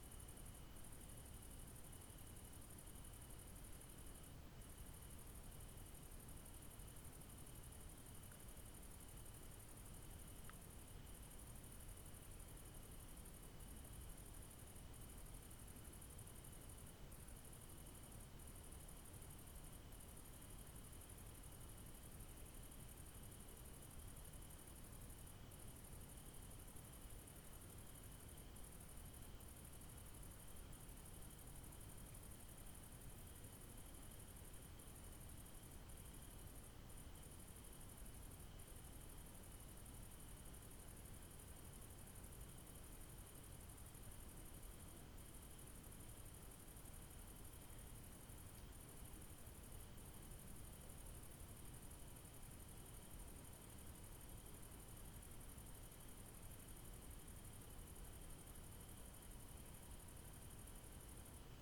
Pasamos un fin de semana super bonito de retiro. De noche, con mi hermana como asistenta de sonido... nos sentamos en un buda de piedra a oscuras a grabar los sonidos nocturnos de la finca rodeada de pinares y bosque... grillitos en un lugar mágico lleno de buena energía.
Ávila, Castilla y León, España, 11 July 2021